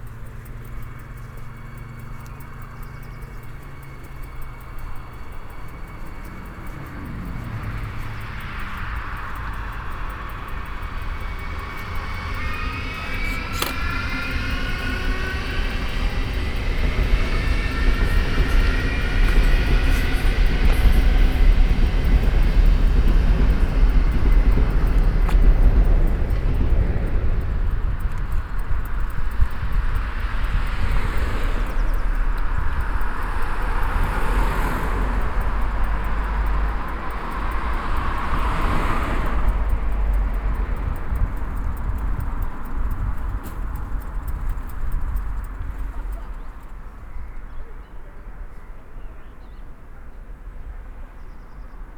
walkway along station, Hamm, Germany - quiet station at Easter weekend
walking the food path along the station rails and onto the bridge across street, river and canal; just two trains pulling out of the station while I pass... Easter Sunday under pandemic